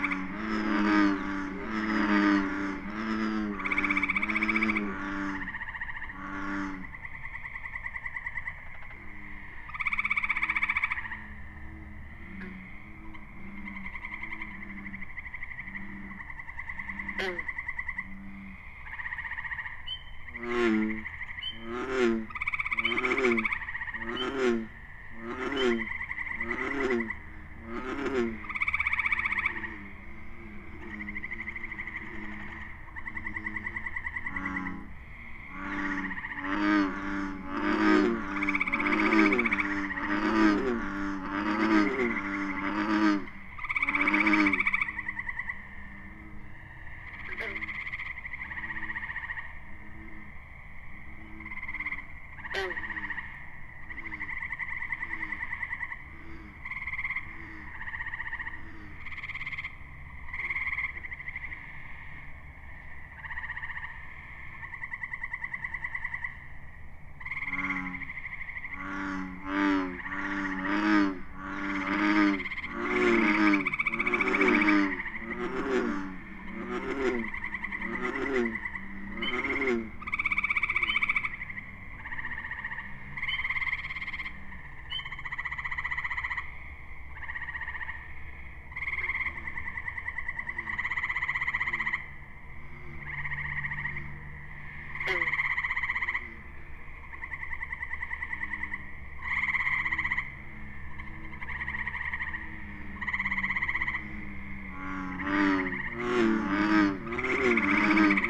Belleplain State Forest, Woodbine, NJ, USA - frog lek
gray tree frogs, bullfrogs, green frogs and spring peepers recorded in a small pond located on the fringe of Belleplain State Forest. Fostex fr=2le with AT3032 mics